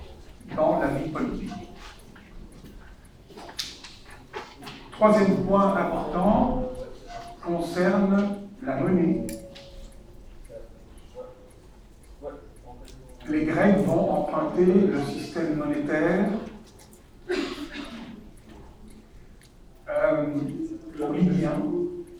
{
  "title": "Quartier du Biéreau, Ottignies-Louvain-la-Neuve, Belgique - Course of antic history",
  "date": "2016-03-11 15:35:00",
  "description": "A course of antic history, in the huge auditoire called Croix du Sud.",
  "latitude": "50.67",
  "longitude": "4.62",
  "altitude": "141",
  "timezone": "Europe/Brussels"
}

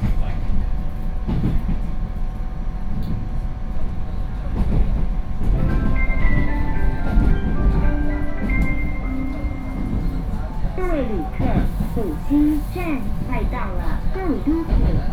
{
  "title": "Taoyuan, Taiwan - On the train",
  "date": "2013-02-08 11:59:00",
  "latitude": "24.93",
  "longitude": "121.20",
  "altitude": "161",
  "timezone": "Asia/Taipei"
}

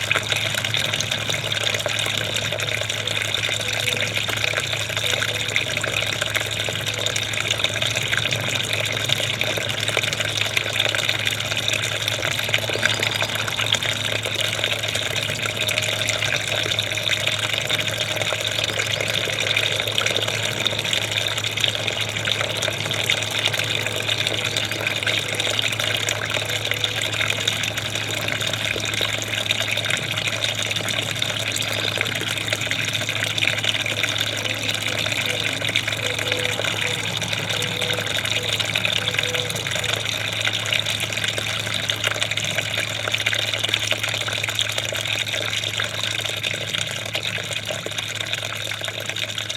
{
  "title": "Basbellain, Luxemburg - Basbellain, garden fountain",
  "date": "2012-08-04 21:10:00",
  "description": "Im hinteren Garten eines Bauerhofes. Der Klang eines kleinen Gartenbrunnens. Ganz im Hintergrund das Geräusch vorbeifahrender Züge auf der nahe gelegenen Bahnstrecke.\nInside the backyard garden of a farmhouse. The sound of a small garden fountain. In the background you can hear the sound of trains passing by on the nearby railway tracks.",
  "latitude": "50.15",
  "longitude": "5.98",
  "altitude": "464",
  "timezone": "Europe/Luxembourg"
}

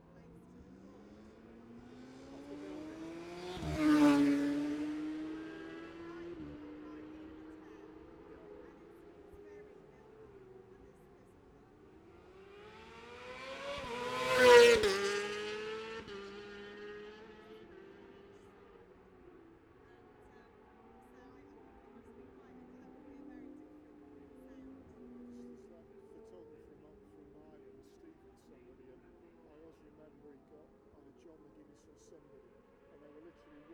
{
  "title": "Jacksons Ln, Scarborough, UK - Gold Cup 2020 ...",
  "date": "2020-09-11 15:07:00",
  "description": "Gold Cup 2020 ... 600 odd and 600 evens qualifying ... Memorial Out ... dpa 4060 to Zoom H5 ...",
  "latitude": "54.27",
  "longitude": "-0.41",
  "altitude": "144",
  "timezone": "Europe/London"
}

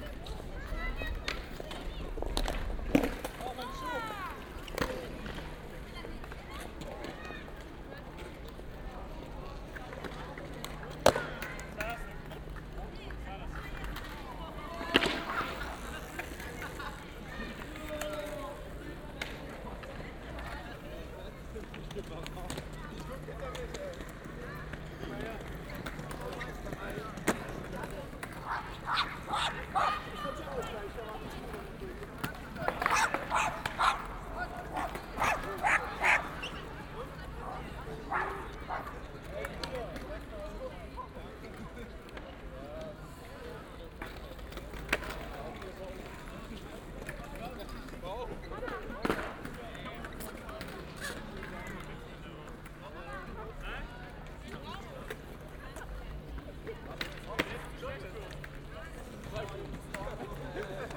{
  "title": "cologne, dom plate, skater - cologne, domplatte, skater 02",
  "date": "2009-01-01 15:56:00",
  "description": "nachmittags, passanten, skater, ein nervöser hund\nsoundmap nrw: social ambiences/ listen to the people - in & outdoor nearfield recordings",
  "latitude": "50.94",
  "longitude": "6.96",
  "altitude": "65",
  "timezone": "Europe/Berlin"
}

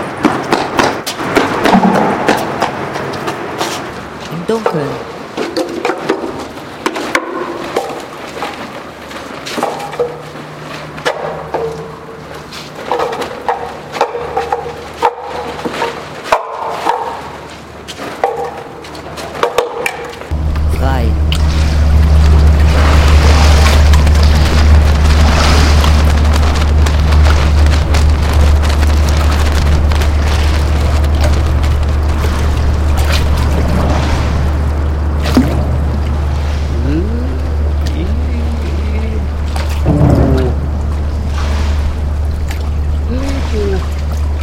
{
  "title": "Playground Ellerholzbrücken - playground",
  "date": "2009-10-31 11:00:00",
  "description": "Die Brücke ist ein Schiff...",
  "latitude": "53.53",
  "longitude": "9.98",
  "altitude": "1",
  "timezone": "Europe/Berlin"
}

